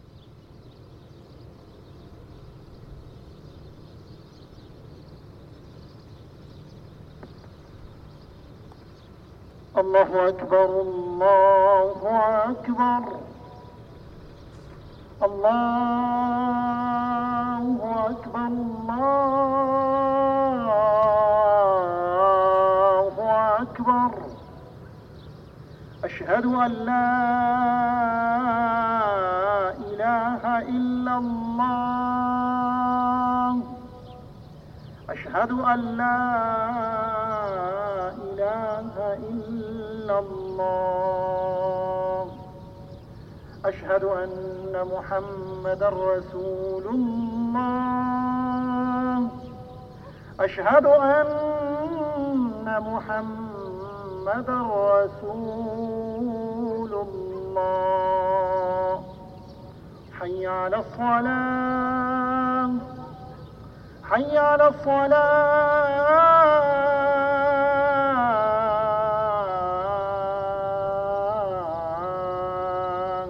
Riffa, Bahreïn - Mosquée BRAMCO - Barhain
Désert du Barhain - Route 5518
Mosquée BRAMCO
Appel à la prière de 18h23